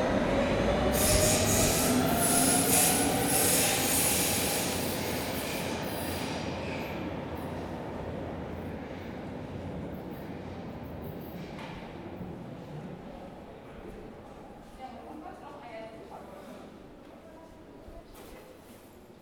St. Pauli, Hamburg, Deutschland - Underground station
The Underground station, trains comming and going, and sometimes it´s amazingly silent!
Hamburg, Germany, 13 February 2016, 13:50